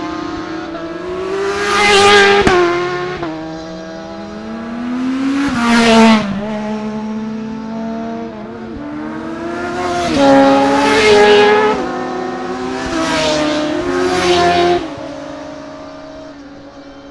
Scarborough, UK - motorcycle road racing 2012 ...
600cc practice ... Ian Watson Spring Cup ... Olivers Mount ... Scarborough ... binaural dummy head ... comes out the wrong way round and a bit loud ... grey breezy day ...